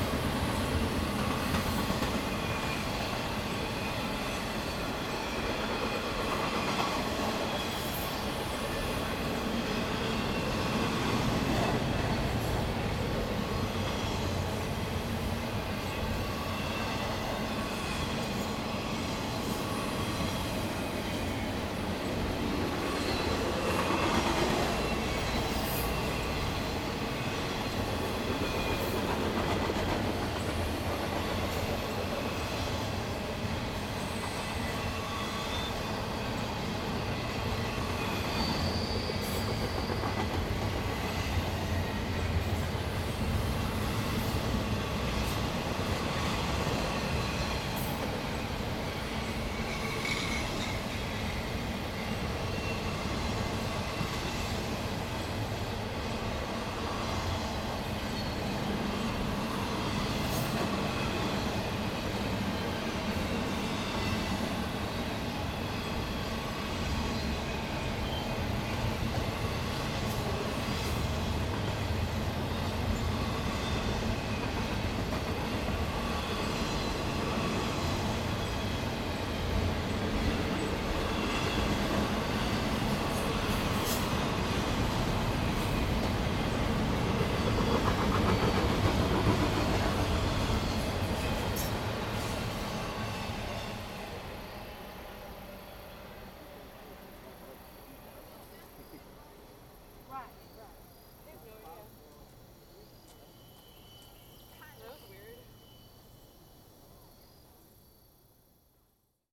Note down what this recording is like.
Castlewood State Park. Recording from about 60 feet (18 meters) away of two freight trains crossing bridge over road. A train first passes from West to East followed almost immediately by one going the other direction.